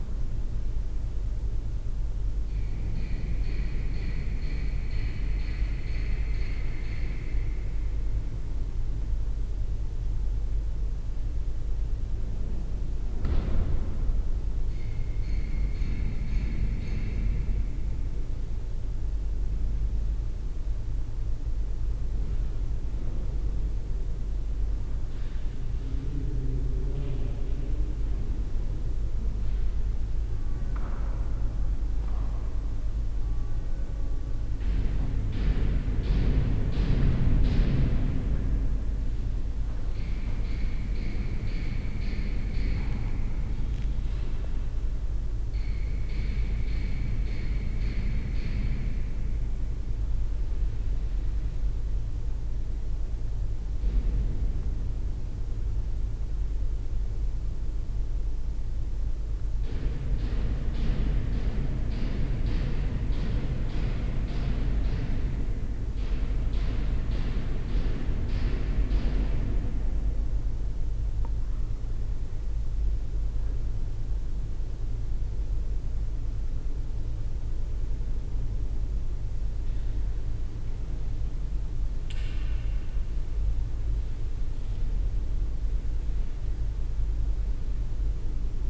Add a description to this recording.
inside the church hall in the early afternoon. silent movemnts of visitors inside - outside the traffic of the shopping mall, soundmap nrw - social ambiences and topographic field recordings